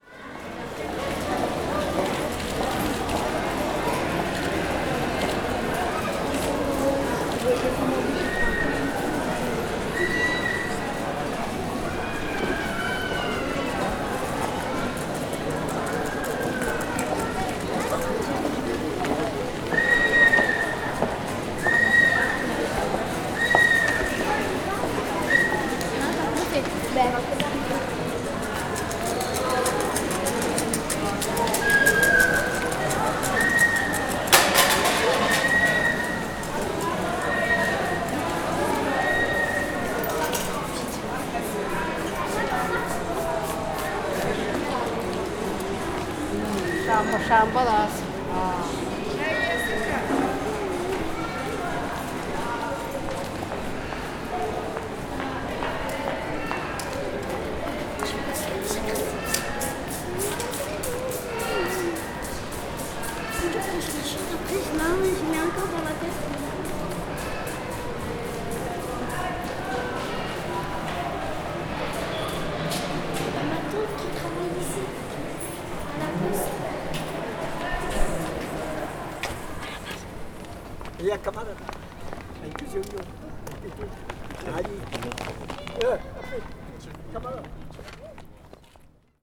Hautepierre, Strasbourg, France - Supermarché Auchan
enregistrement réalisé par l'atelier périscolaire SON de la maille Jacqueline (par Tatiana et Youssra)